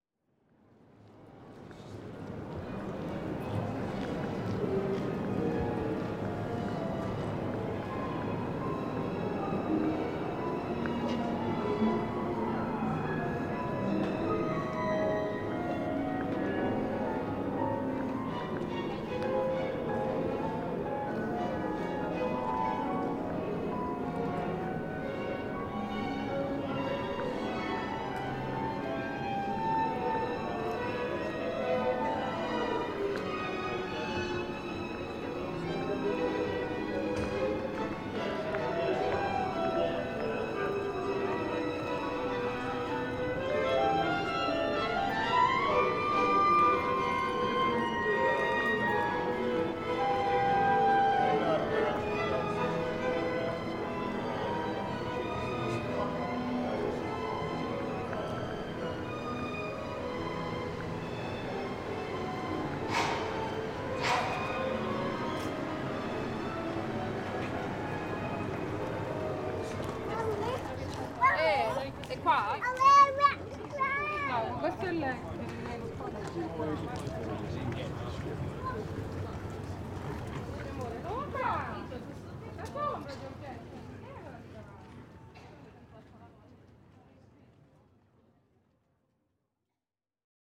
Pepys Walk, London, UK - Walk Through the Courtyard of Trinity College of Music (Greenwich)
A walk through the courtyard of Trinity College of Music in Greenwich with the sound of many music students practising. (Recorded on Zoom H5 with external shotgun mic.)
June 2018